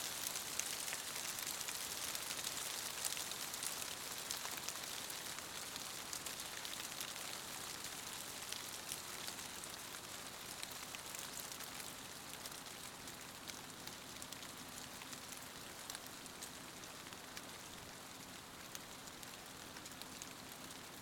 Rain in a corn field, near
REC: Rode NT-2
17 November 2015, ~03:00